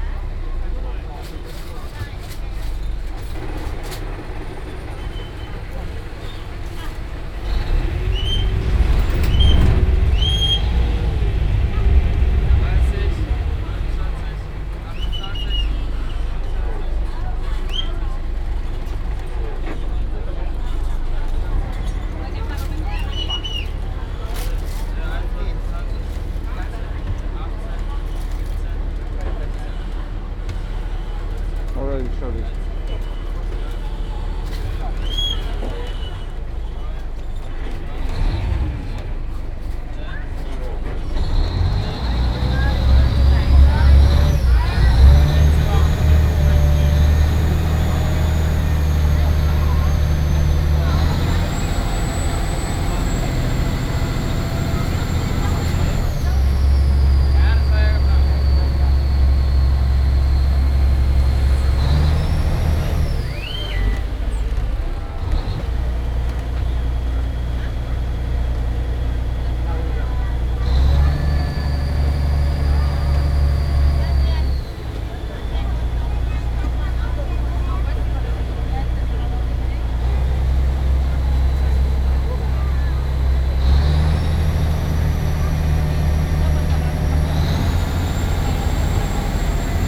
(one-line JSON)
{"title": "ถนน สี่พระยา Khwaeng Bang Rak, Khet Bang Rak, Krung Thep Maha Nakhon, Thailand - Flussbus Bangkok", "date": "2017-08-29 12:40:00", "description": "A boat bus running along the river in Bangkok. Theres people, the motor and an occasional whistling which was produced by the boats co-sailor, signaling the captain how close he is to the next landing stage, whether the rope has been fixed or losened, and whether the boat is ready to take off again -- a very elaborate whistling technique.", "latitude": "13.73", "longitude": "100.51", "altitude": "4", "timezone": "Asia/Bangkok"}